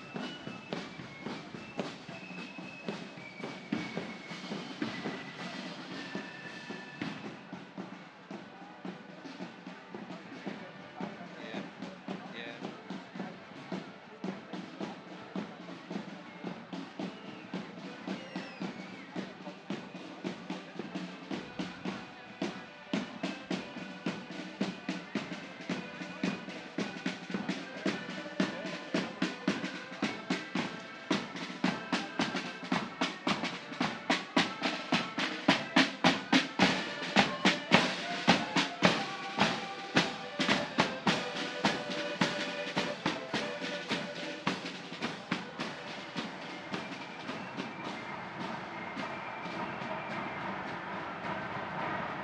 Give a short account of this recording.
Due to the Covid-19 pandemic, the Orange Day parades were previously restricted in size and attendance. In 2022, the parade was back on in pre-covid conditions, meaning a full day of marching and celebration. The recordings took place such as the recording position of last year and the return route marching was recorded within a flat less than 300m from Lisburn Road. The recordings are separated into four sections to best time compress the activities taking place during the march, Section 1 – beginning of marching, Section 2 – marching break, Section 3 – continued marching, and Section 4 – return route marching through the perspective on window listening. Recording of Full Parade, Return Parade, marching, viewers, helicopters, drums, whistles, flutes, accordions, vehicles, chatter, celebration, bottles, drinking, smoking, and stalled vehicles.